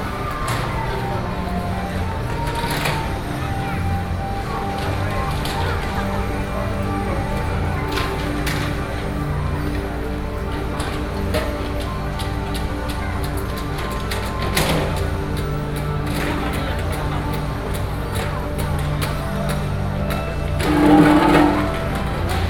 Xinyi District, Taipei City - Street performances